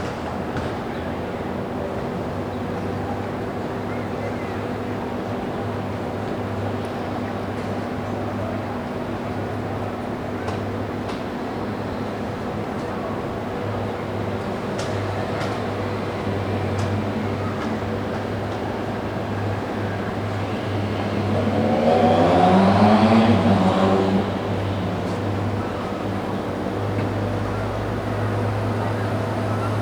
Balcony, Room, Holiday Inn Imperiale, Via Paolo e Francesca, Rimini RN, Italy - Morning sounds of Via Pabolo e Francesca
Helicopter buzzing the beach, lots of mopeds and people sounds.